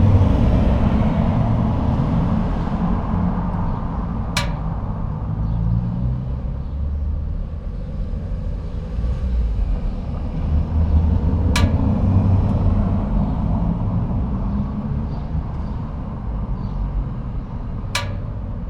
rain gutter, tyrševa - seldom rain drops